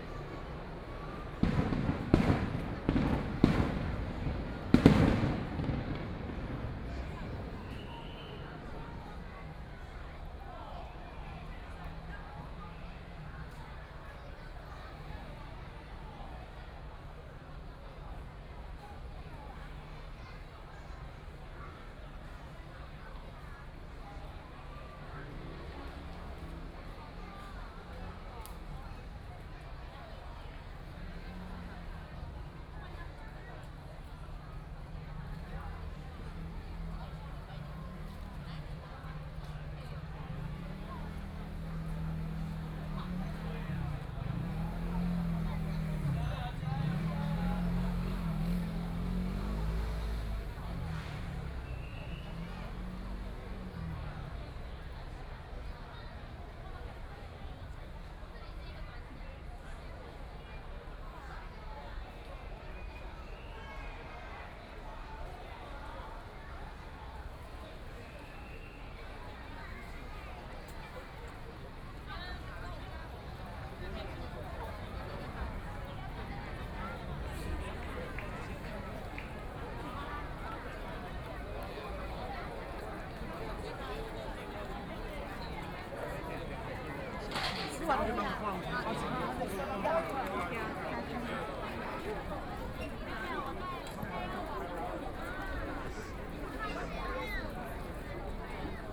Sec., Neihu Rd., Taipei City - Festival
Walking along the lake, Very many people in the park, Distance came the sound of fireworks, Footsteps
Please turn up the volume a little. Binaural recordings, Sony PCM D100+ Soundman OKM II
Taipei City, Taiwan, April 2014